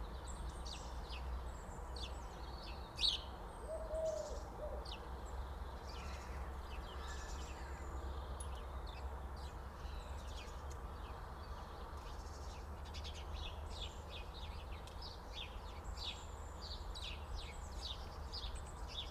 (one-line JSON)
{"title": "Harp Meadow Lane Colchester - Garden Birds at Home, Christmas Day.", "date": "2018-12-25 11:00:00", "description": "Recorded using Mixpre6 and USI Pro, Blue-tits and Sparrows, maybe a robin or two that seem to gravitate towards a certain bush in the garden. I clipped the microphones onto the bush, and tried to capture not only their calls but also their wingbeats.", "latitude": "51.88", "longitude": "0.88", "altitude": "34", "timezone": "GMT+1"}